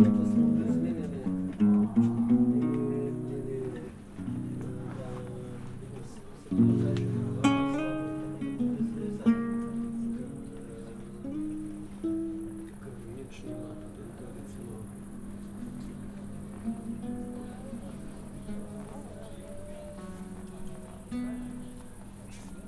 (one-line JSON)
{"title": "Maribor, Medvedova, Babica - gathering in the garden", "date": "2012-08-02 21:00:00", "description": "opening of a video installation by Natasha Berk at Babica. Frank is idling on the guitar.", "latitude": "46.57", "longitude": "15.63", "altitude": "277", "timezone": "Europe/Ljubljana"}